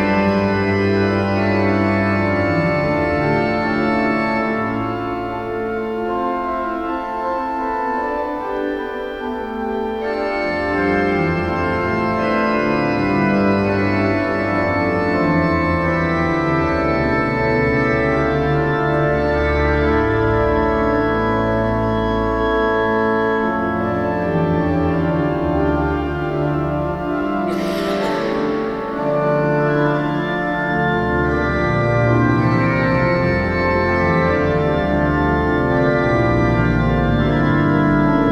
Organ concert Marienkirche - 2/7 Organ concert Marienkirch
02 Dietrich Buxtehude_ BuxWV 180 — Christ, unser Herr, zum Jordan kam (D minor)
Berlin, Germany, 8 September 2011, 12:00